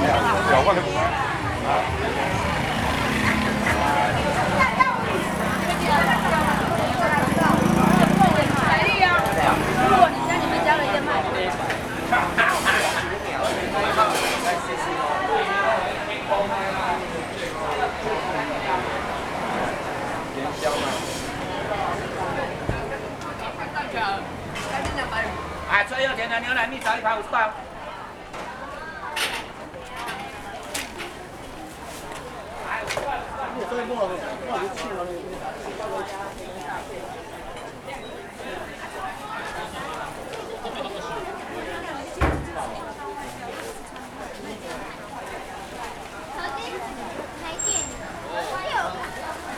Taipei, Taiwan - Traditional markets
Walking in the Traditional markets, Rode NT4, Sony Hi-MD MZ-RH1
台北市 (Taipei City), 中華民國, 6 February 2012, 12:01